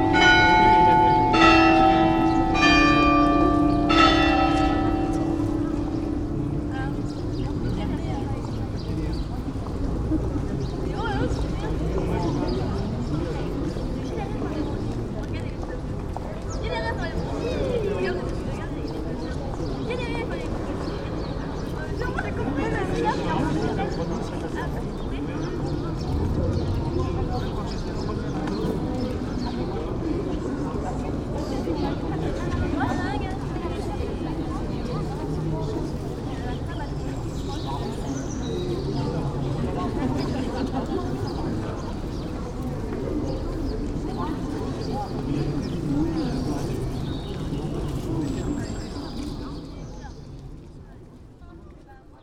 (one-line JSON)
{"date": "2011-11-27 14:43:00", "description": "outside the cathedrale notre dame de rouen on a sunday afternoon, passersby and styrofoam ornaments blowing on a christmas tree", "latitude": "49.44", "longitude": "1.09", "altitude": "23", "timezone": "Europe/Paris"}